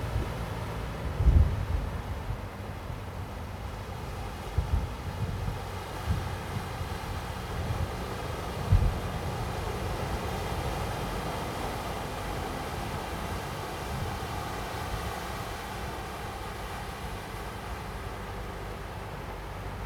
Sec., Huhai Rd., Zhongshan Dist., Keelung City - On the coast
sound of the waves, Rocky, On the coast, Traffic Sound, Thunder
Zoom H2n MS+XY +Sptial Audio